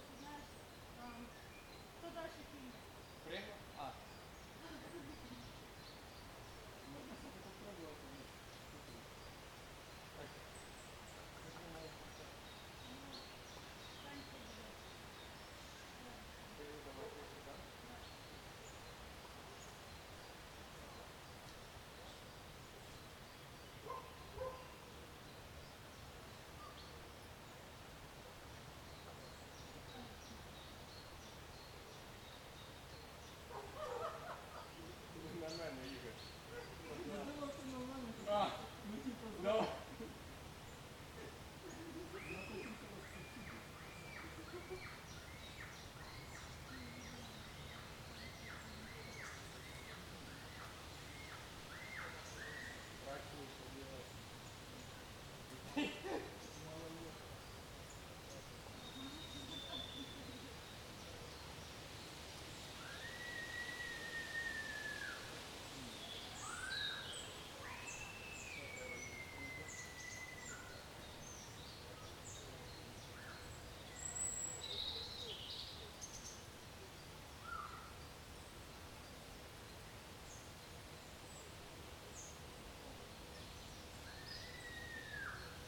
{"title": "вулиця Нагірна, Вінниця, Вінницька область, Україна - Alley12,7sound13natureconversations", "date": "2020-06-27 13:38:00", "description": "Ukraine / Vinnytsia / project Alley 12,7 / sound #13 / nature - conversations", "latitude": "49.22", "longitude": "28.46", "altitude": "242", "timezone": "Europe/Kiev"}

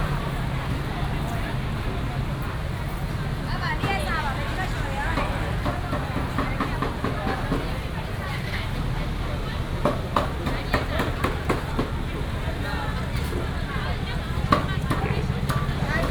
{"title": "Ln., Guoqing Rd., Banqiao Dist. - Evening market", "date": "2017-04-30 16:57:00", "description": "Evening market, Traffic sound, vendors peddling", "latitude": "25.00", "longitude": "121.46", "altitude": "25", "timezone": "Asia/Taipei"}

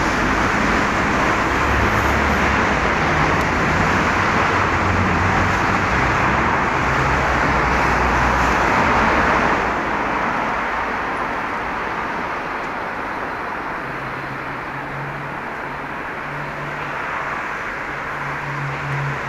{"title": "Urbanstraße, Berlin, Deutschland - Soundwalk Urbanstrasse", "date": "2018-02-09 14:15:00", "description": "Soundwalk: Along Urbanstrasse until Graefestrasse\nFriday afternoon, sunny (0° - 3° degree)\nEntlang der Urbanstrasse bis Graefestrasse\nFreitag Nachmittag, sonnig (0° - 3° Grad)\nRecorder / Aufnahmegerät: Zoom H2n\nMikrophones: Soundman OKM II Klassik solo", "latitude": "52.49", "longitude": "13.42", "altitude": "36", "timezone": "Europe/Berlin"}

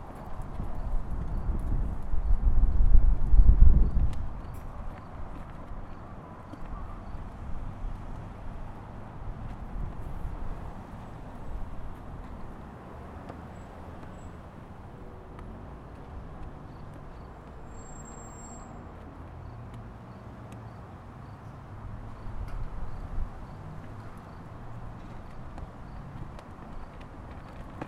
{"title": "S 25th St, Colorado Springs, CO, USA - Old Colorado City Post Office", "date": "2018-05-14 17:30:00", "description": "Zoom H4n Pro, dead cat used. Flag in the wind.", "latitude": "38.85", "longitude": "-104.86", "altitude": "1857", "timezone": "America/Denver"}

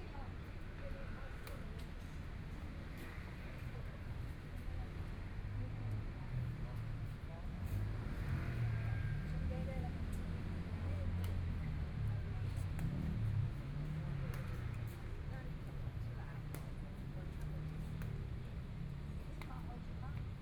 {"title": "SiPing Park, Taipei City - in the park", "date": "2014-02-17 16:47:00", "description": "Afternoon sitting in the park, Traffic Sound, Sunny weather, Community-based park, Elderly chatting, Playing badminton\nBinaural recordings, Please turn up the volume a little\nZoom H4n+ Soundman OKM II", "latitude": "25.05", "longitude": "121.53", "timezone": "Asia/Taipei"}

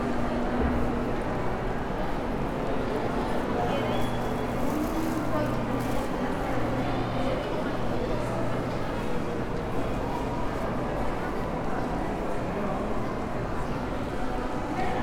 {"title": "Blvd. Juan Alonso de Torres Pte., Valle del Campestre, León, Gto., Mexico - Centro comercial plaza mayor diciembre 2019.", "date": "2019-12-19 20:16:00", "description": "Plaza Mayor shopping center in December 2019.\nFrom the parking lot, through several aisles, in some stores and back to the car.\nI made this recording on December 19th, 2019, at 8:16 p.m.\nI used a Tascam DR-05X with its built-in microphones and a Tascam WS-11 windshield.\nOriginal Recording:\nType: Stereo\nCentro comercial plaza mayor diciembre 2019.\nDesde el estacionamiento, pasando por varios pasillos, en algunas tiendas y de regreso al coche.\nEsta grabación la hice el 19 de diciembre 2019 a las 20:16 horas.", "latitude": "21.16", "longitude": "-101.70", "altitude": "1830", "timezone": "America/Mexico_City"}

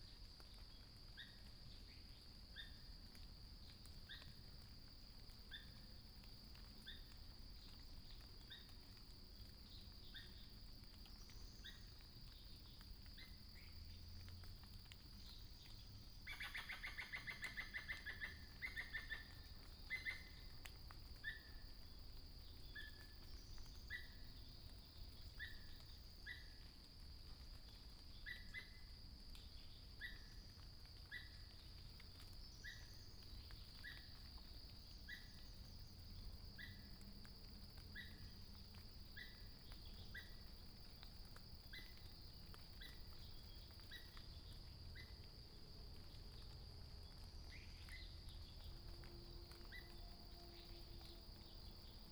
{"title": "水上巷, 埔里鎮桃米里, Taiwan - In the woods", "date": "2016-04-21 06:10:00", "description": "Bird sounds, In the woods Sound of water droplets", "latitude": "23.94", "longitude": "120.92", "altitude": "593", "timezone": "Asia/Taipei"}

